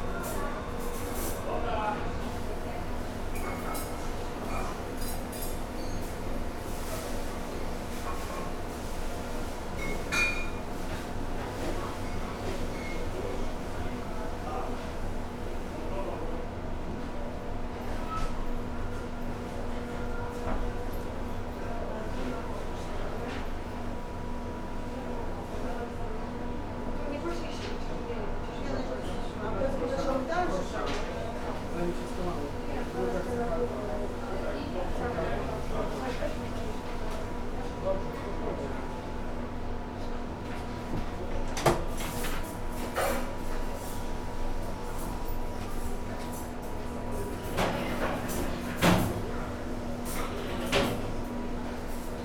Jaroczynskiego, Poznan - changing room
ambience of a restaurant recorded in the staff changing room. staff conversations, AC hum, cutlery rattle, moving about cooking utensils in the kitchen, beep of the convection oven. the restaurant wasn't very busy at the moment (sony d50 internal mics)
Poznań, Poland, 24 August 2018